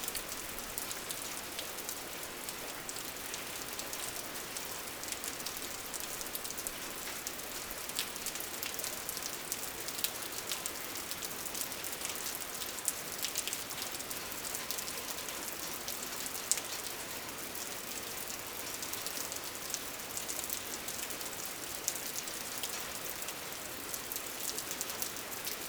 Herserange, France - Rain symphony - III - Presto
This is a one hour sound of the rain onto the gigantic roofs of an abandoned factory. This warehouse is the Herserange wire drawing plant, located in Lorraine, France. It has been in a state of abandonment for 20 years. In 1965, Longwy area was the lifeblood of 26,000 steelmakers. Today, absolutely everything is dead. Areas are devastated, gloomy and morbid.
Fortunately, I had the opportunity to make a poetic visit, since I had the rare and precious opportunity to record the rain in all its forms. The gigantic hangar offers a very large subject, with many roof waterproofing defects.
I made two albums of this place : a one-hour continuity of rain sound (the concerto) and a one-hour compilation of various rain sounds (the symphony). Here is the sound of the symphony.
III - Presto